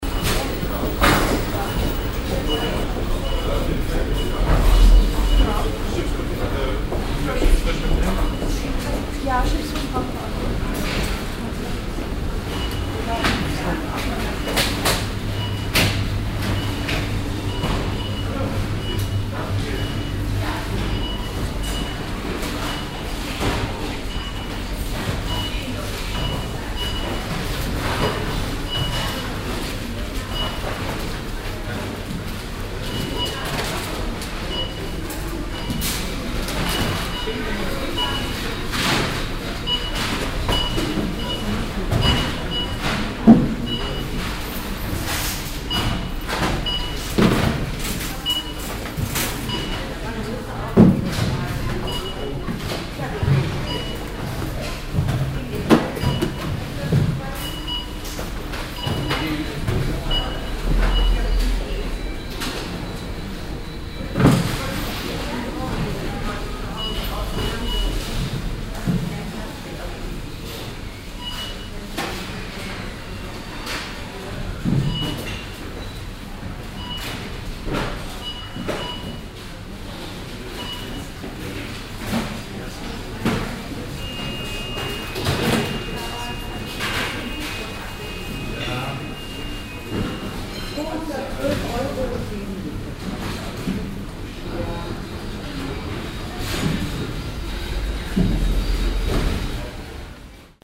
haan, handleshof - haan, handelshof
im handelshof nachmittags
project: : resonanzen - neanderland - social ambiences/ listen to the people - in & outdoor nearfield recordings
21 April, 15:58